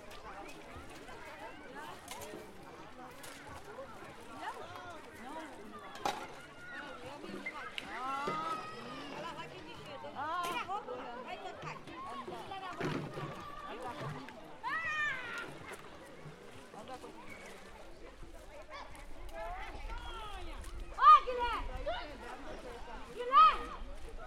banho de rio - povo kalunga
Brazil, August 2009